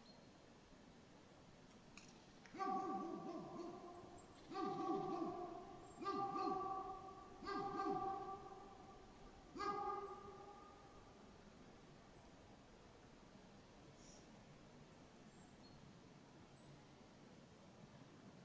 Lithuania, Kulionys, evening
village amongst the woods, sacred place, dogs